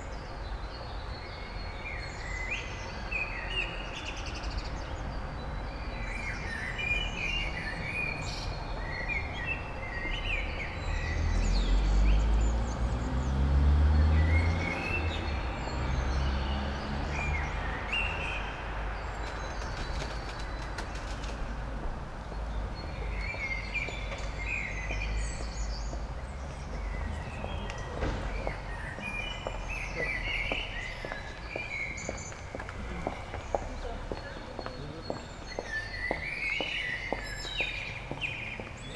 while windows are open, Maribor, Slovenia - before dawn
2 April 2014